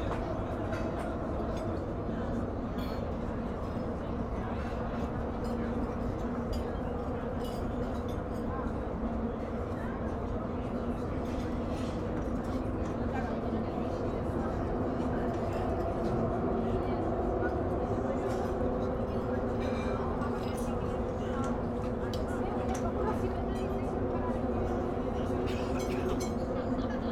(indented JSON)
{
  "title": "lisbon, doca da santo amaro - restaurants at quai",
  "date": "2010-07-03 14:20:00",
  "description": "tourist restaurants at the marina near river tejo. hum of nearby ponte 25 de abril",
  "latitude": "38.70",
  "longitude": "-9.18",
  "altitude": "13",
  "timezone": "Europe/Lisbon"
}